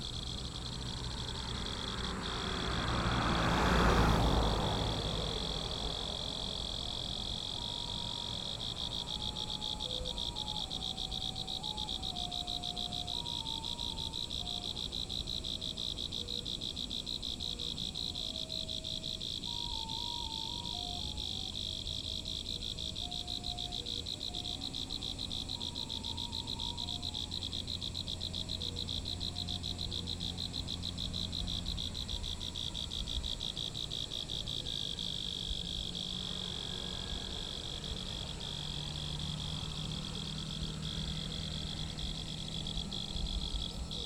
五結鄉利澤村, Yilan County - Insects sound
Night of farmland, Traffic Sound, Insects sound
Zoom H6 MS+ Rode NT4